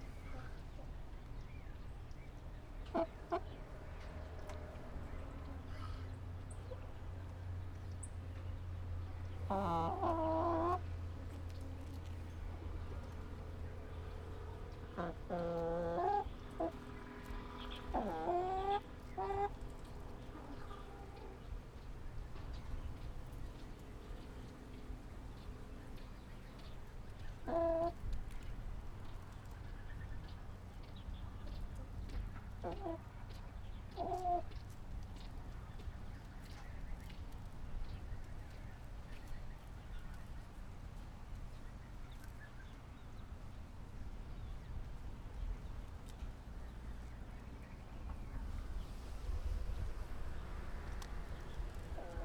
坂里村, Beigan Township - In coop
In coop, Chicken sounds
Zoom H6+Rode NT4